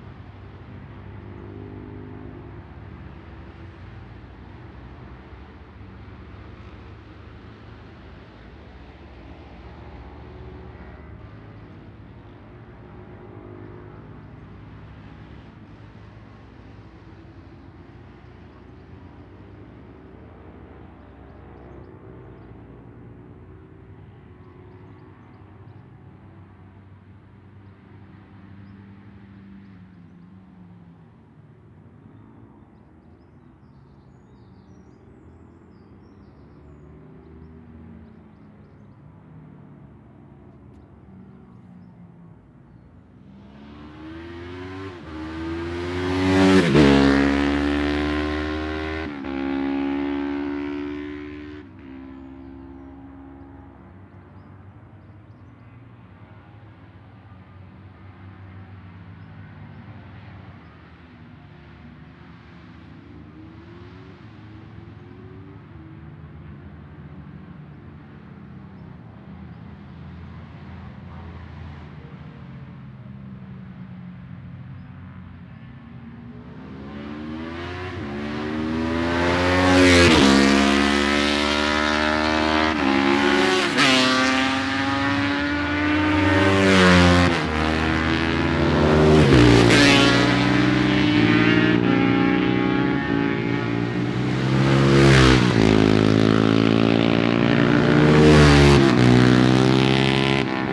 barry sheene classic 2009 ... practice ... one point stereo mic to minidisk ...
Scarborough, UK, 23 May 2009